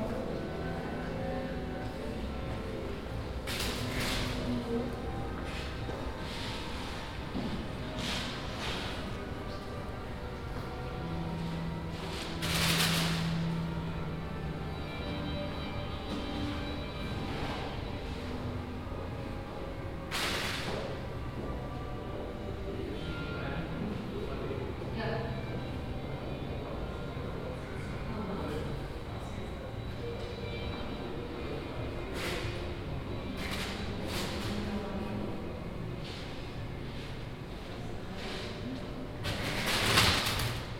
Düsseldorf, Ehrenhof, Museum Kunstpalast, media exhibition - düsseldorf, ehrenhof, media exhibition
media exhibition - paik award 2010 within the nam june paik exhibition - here sounds of a plastic bag motor installation
soundmap d - social ambiences, art spaces and topographic field recordings